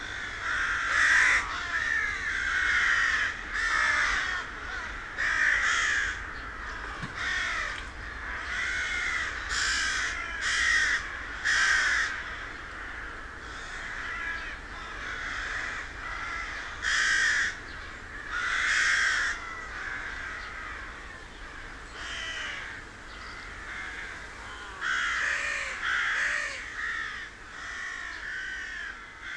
Kelmė, Lithuania, local crows
every town has its local crows gathering....